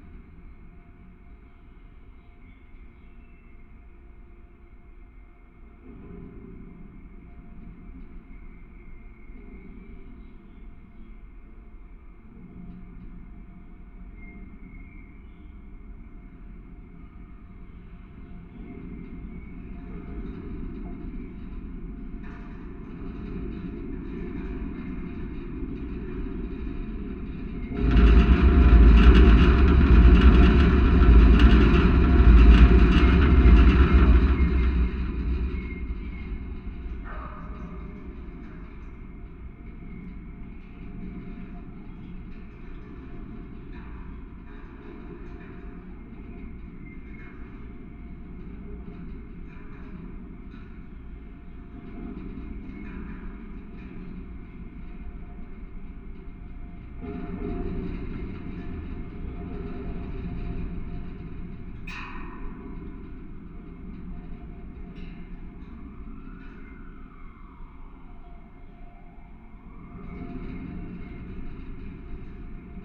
Praha, Park Karlov
contact mic recording of scaffold connected to bridge